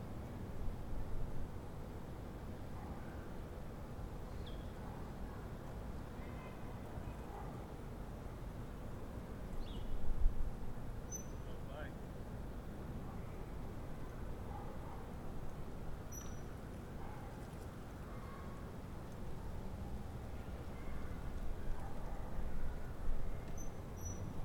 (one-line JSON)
{"title": "Corner of Vesta and Robinwood - Posh residential street in lockdown", "date": "2020-04-08 18:00:00", "description": "Recorded (with a Zoom H5) at an intersection in the expensive Toronto neighbourhood of Forest Hill.", "latitude": "43.70", "longitude": "-79.42", "altitude": "165", "timezone": "America/Toronto"}